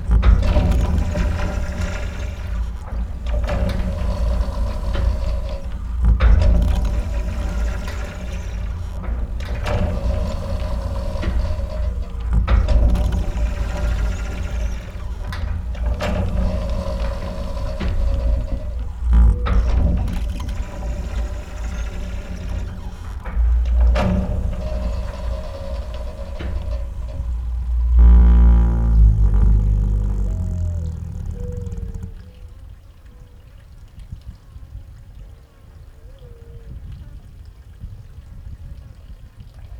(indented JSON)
{
  "title": "Arivaca Desert (Arizona) - Windmill screaming in the desert",
  "date": "2021-08-16 18:00:00",
  "description": "A windmill in the desert of Arizona is screaming while the wind is coming. Those windmills are used to pump water, in order to give water to cattle or wild animals for hunting. The screaming is produced naturally by the central mast on a piece of wood (part of the windmill).\nRecorded during a scouting for an upcoming sound art project in Arizona (to be done in 2022).\nMany thanks to Barry, Mimi and Jay for their help.\nRecorded by a Sound Devices MixPre6\nWith a MS Schoeps Setup CCM41 + CCM8 in a Zephyx windscreen by Cinela, and a Geofon by LOM (for the metallic sounds)\nSound Ref: AZ210816T005\nRecorded on 16th of August 2021\nGPS: 31.625619, -111.325112",
  "latitude": "31.63",
  "longitude": "-111.33",
  "timezone": "Pacific/Honolulu"
}